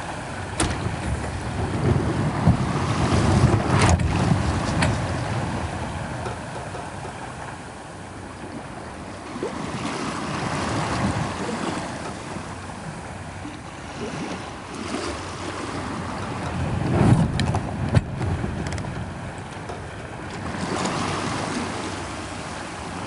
Recorded with a stereo pair of DPA 4060s and a Sound Devices MixPre-3
UK, 2 July, ~15:00